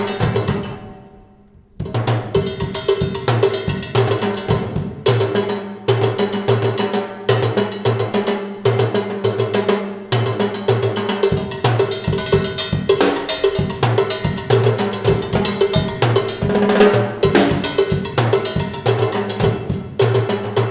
Palacio de Gobierno, Cienfuegos, Cuba - Percussionist practicing in open courtyard upstairs
A fantastic percussionist practicing in the open-air courtyard upstairs in the Palacio de Gobierno.